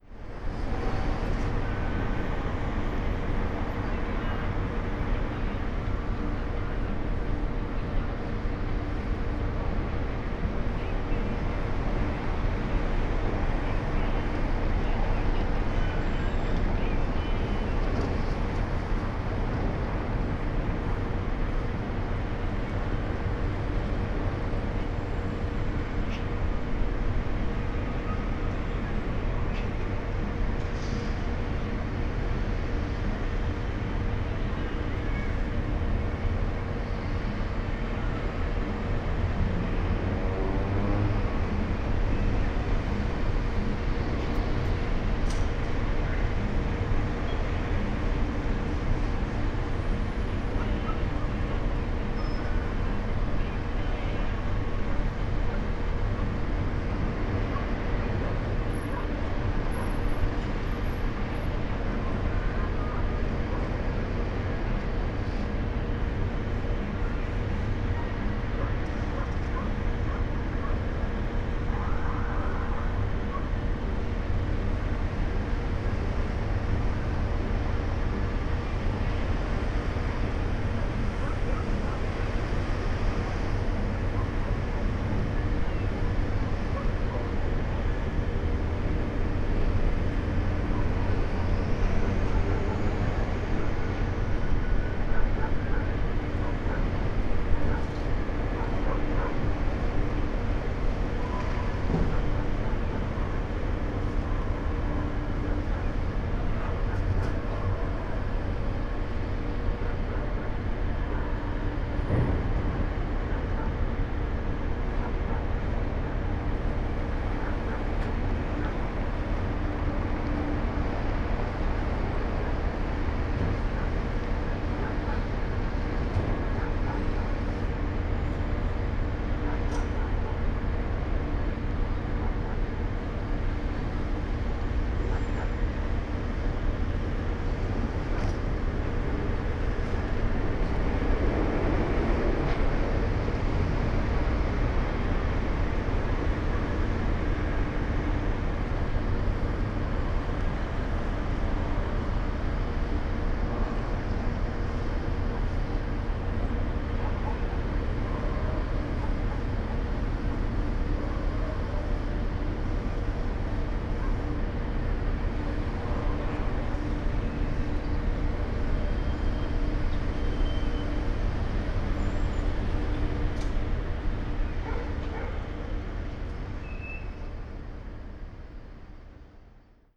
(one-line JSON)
{"title": "Castillo, Valparaíso, Chile - distant harbour ambience from a balcony", "date": "2015-11-23 21:30:00", "description": "harbour activity heard from balcony a few hundred meters away\n(Sony PCM D50, Primo EM172)", "latitude": "-33.04", "longitude": "-71.63", "altitude": "33", "timezone": "America/Santiago"}